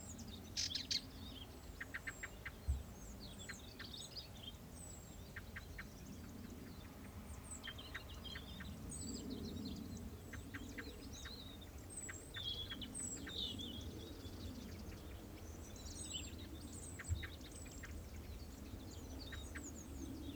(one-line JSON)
{"title": "Parco Naturale Regionale Litorale di Punta Pizzo e Isola di Sant'Andrea, Italie - 30 minutes of sound pollution", "date": "2014-10-29 16:30:00", "description": "Acoustic Ecology:\n30 minutes of sound pollution (raw field recording)@ Parco Naturale Regionale Litorale di Punta Pizzo e Isola di Sant'Andrea, Italie\nZoom H4n (sorry !..)\n+ DPA 4060", "latitude": "39.99", "longitude": "18.01", "altitude": "6", "timezone": "Europe/Rome"}